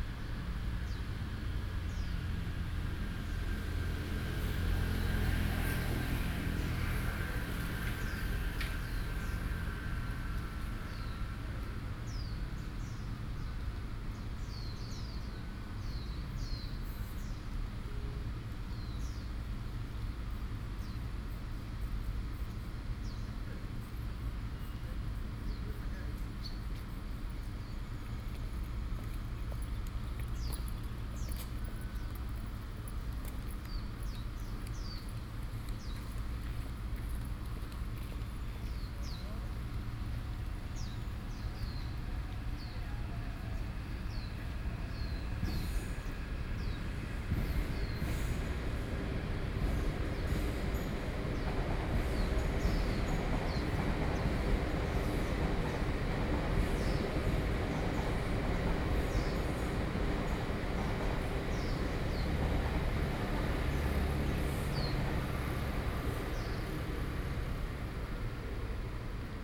Fuxinggang Station, Beitou - Hot evening
Seat beside the MRT Stations, Sony PCM D50 + Soundman OKM II
台北市 (Taipei City), 中華民國